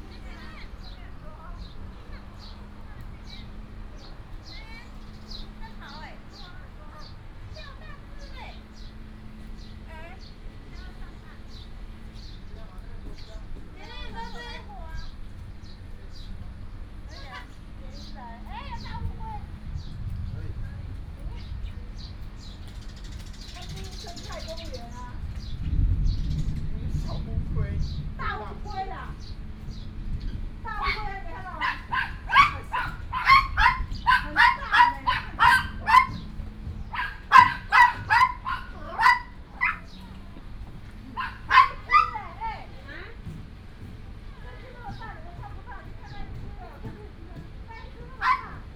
桃園八德埤塘生態公園, Bade Dist. - Birds and thunder sound
walking In the park, Dog sounds, Birds sound, Traffic sound, thunder sound, Tourists